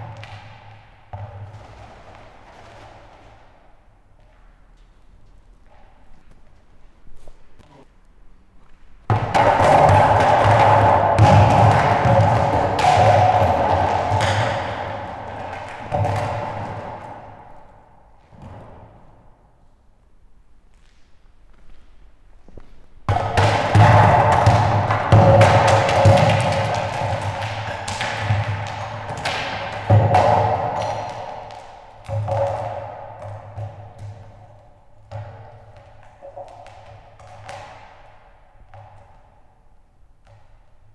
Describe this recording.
Jeux acoustiques dans une usine desaffectée